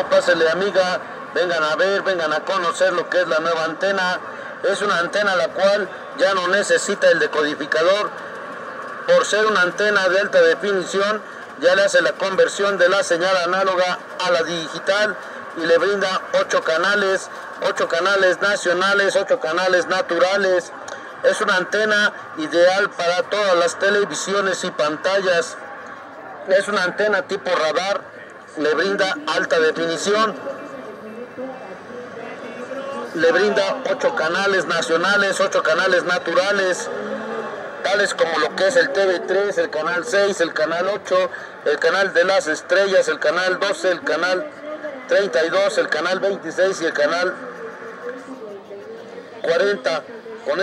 Jardín de San Luis Park, Av. 10 Ote., Centro histórico de Puebla, Puebla, Pue., Mexique - Puebla (Mexique) - 5 de Mayo
Puebla (Mexique)
La rue est saturée d'annonces publicitaires.
ambiance